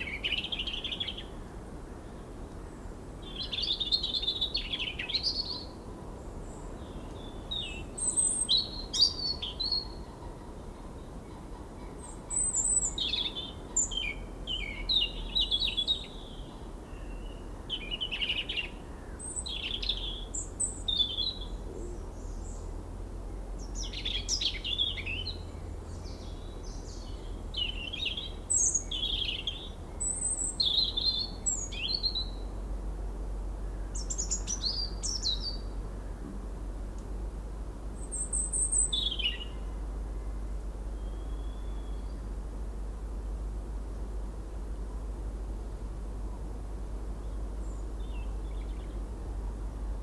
Fen Covert, Suffolk, UK - Two Robins sing in the damp dusk woodlands
This a spooky place, especially at dusk. It is wet and marshy. Fallen trees grow twisting branches that slither over each other. White birch trunks almost glow in the fading light. Breaths of wind occasionally pass by leaving errie stillness in their wake.